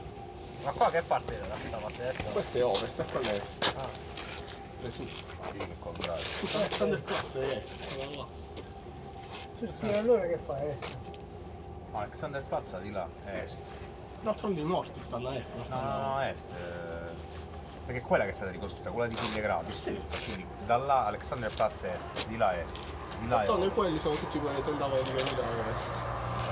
Ackerstraße, Wedding, Berlin, Deutschland - Berlin Wall Memorial, Ackerstraße, Berlin - Italian tourists enjoying a panoramic view on Berlin
Berlin Wall Memorial, Ackerstraße, Berlin - Italian tourists enjoying a panoramic view on Berlin.
[I used an MD recorder with binaural microphones Soundman OKM II AVPOP A3]
Berlin, Deutschland, European Union, 2 April, ~16:00